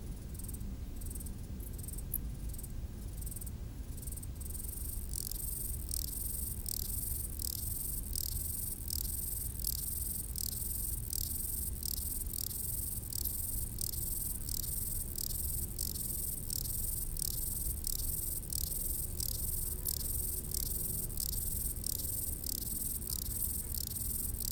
Route du relais télévision du Mont du Chat à 1500m d'altitude les stridulations des criquets sur le talus et les bruits lointains de la vallée en arrière plan.